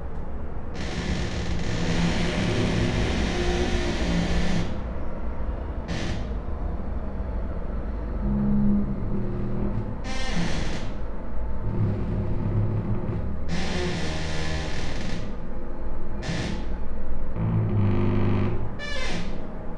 doors, Karl Liebknecht Straße, Berlin, Germany - afternoon creaky lullaby for wind and traffic noise, bathroom doors